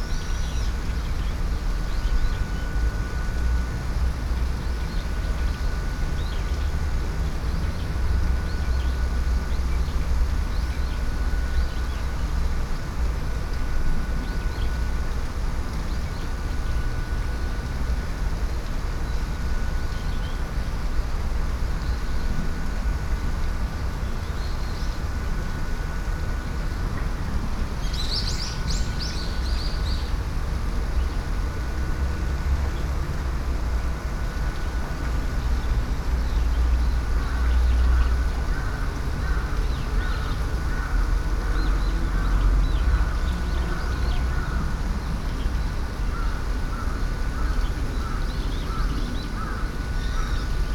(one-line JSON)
{"title": "water fall, Shoseien, Kyoto - dark green lights, subtle maple leaves ornaments above", "date": "2014-10-31 13:37:00", "description": "gardens sonority\nbirds, crow, traffic noise", "latitude": "34.99", "longitude": "135.76", "altitude": "37", "timezone": "Asia/Tokyo"}